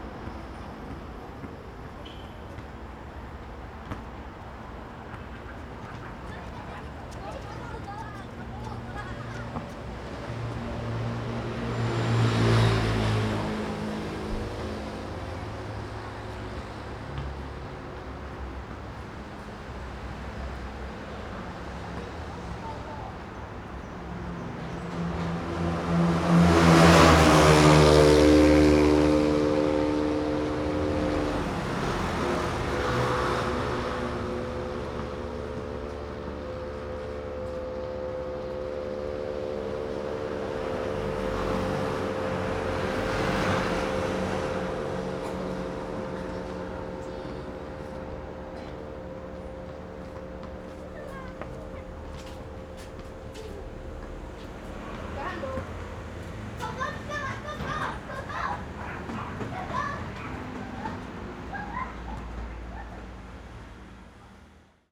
Traffic Sound, Next to the tracks
Zoom H4n +Rode NT4
Banqiao District, New Taipei City, Taiwan